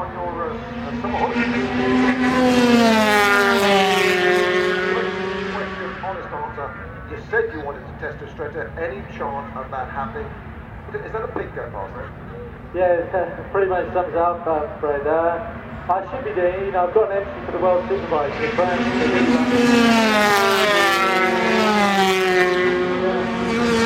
Castle Donington, UK - British Motorcycle Grand Prix 2002 ... 250 ...
British Motorcycle Grand Prix 2002 ... 250 qualifying ... one point stereo mic to minidisk ... commentary ... time optional ...